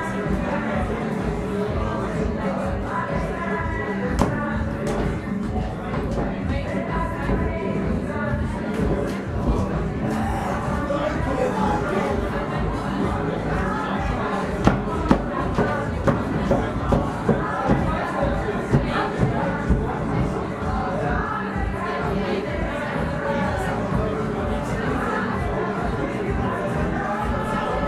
berlin, weichselstraße: bar - the city, the country & me: bar
at kachellounge (= tile lounge) bar, on the wooden stairs leading to the basement of the bar, partying guests
the city, the country & me: april 17, 2011
Berlin, Germany, 17 April, ~00:00